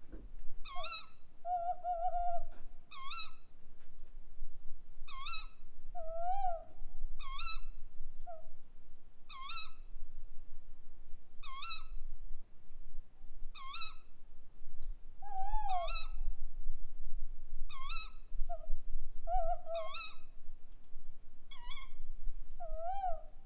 Gemeinde Keutschach am See, Österreich - 2 Waldkäuzchen im Dialog
in a quiet apple garden, two brown owls talk to each other. At the end, a surprising finish results